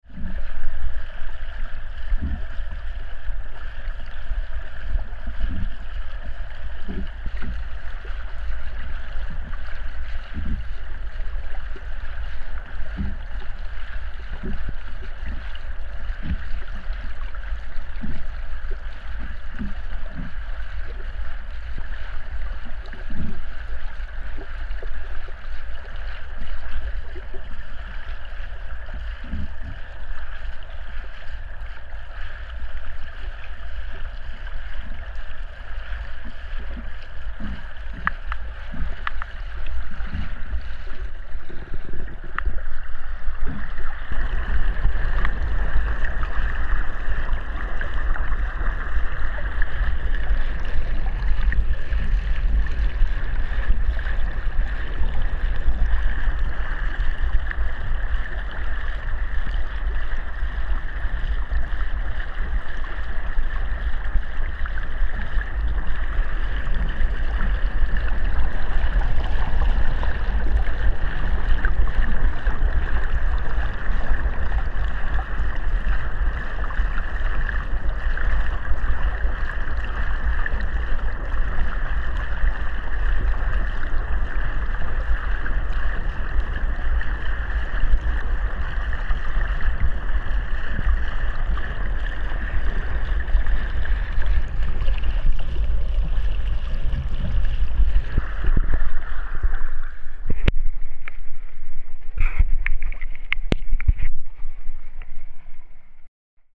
R. da Igreja, Furnas, Portugal - Parque Terra Nostra
Recording Terra Nostra Park water .Azores - Recording in the frame of the project "Terra Nullius" artistic residency @ Arquipélago with Paula Diogo.
hydrophone . recording with a Sound Device. by João Bento.
São Miguel, Açores, Portugal, 9 September 2020